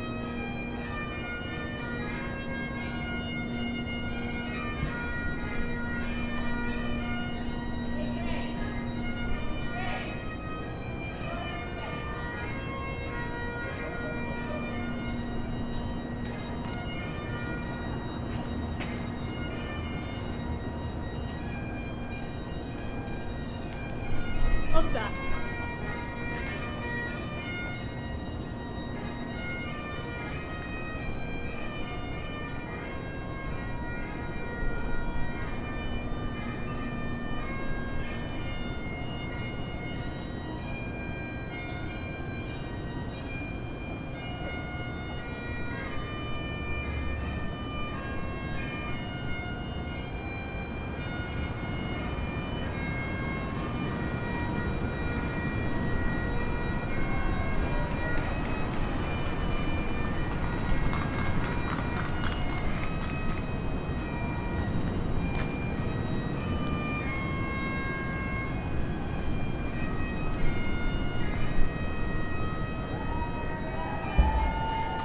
Killarney Rd, Toronto, ON, Canada - Distanced Birthday Party Bagpiper
A family couldn't all be together as usual at a grandfather's birthday party, because of worries about spreading Covid-19, so his children hired a bagpiper to play outside. The rest of the family was outside on the street with the piper. (Recorded with Zoom H5.)